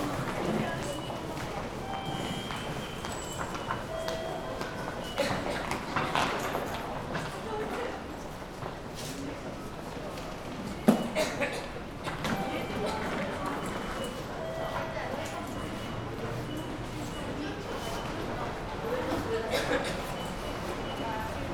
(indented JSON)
{
  "title": "Tokyo, entrance to the Ochanomizu station - evening commuters",
  "date": "2013-03-28 22:10:00",
  "description": "the place was dense with people, talking and rushing somewhere, beeps of the tickets gates, utility man cleaning the floor and moving stuff.",
  "latitude": "35.70",
  "longitude": "139.76",
  "altitude": "18",
  "timezone": "Asia/Tokyo"
}